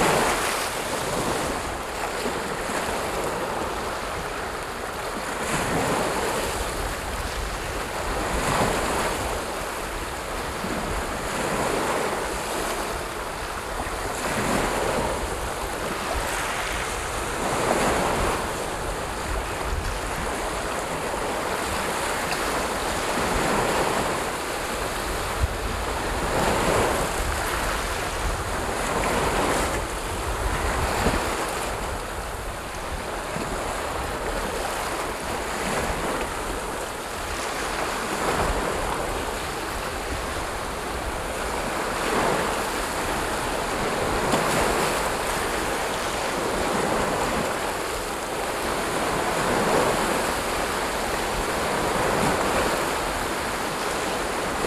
Nørgårdvej, Struer, Danmark - Beach at Struer sound of medium heavy waves.
Beach at Struer sound of medium heavy waves. Recorded with rode NT-SF1 Ambisonic Microphone. Øivind Weingaarde